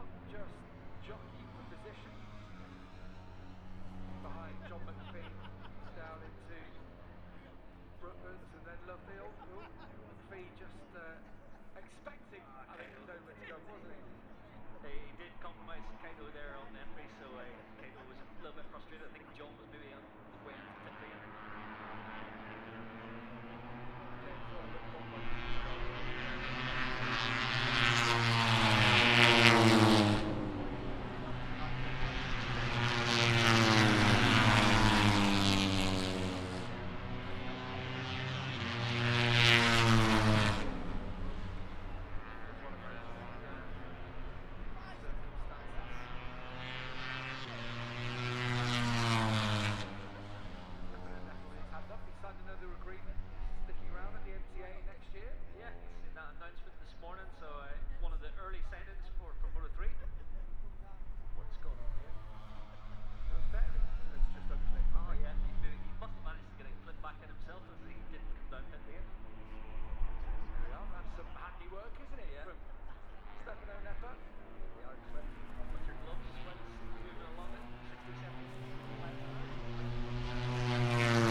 Silverstone Circuit, Towcester, UK - british motorcycle grand prix 2022 ... moto three
british motorcycle grand prix 2022 ... moto three free practice two ... zoom h4n pro integral mics ... on mini tripod ...